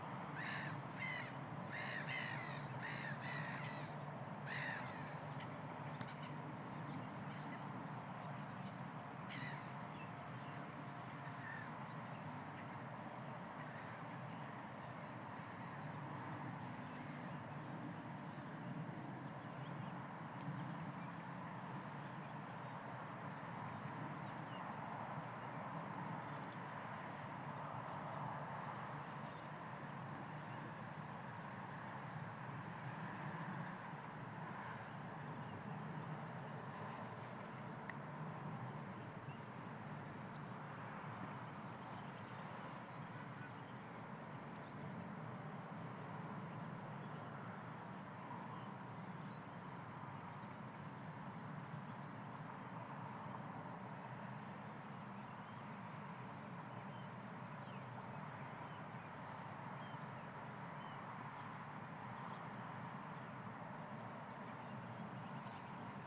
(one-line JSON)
{"title": "Olsztyn, Polska - Track lake", "date": "2013-04-13 15:09:00", "description": "Track lake. Ice is still present.", "latitude": "53.79", "longitude": "20.54", "altitude": "122", "timezone": "Europe/Warsaw"}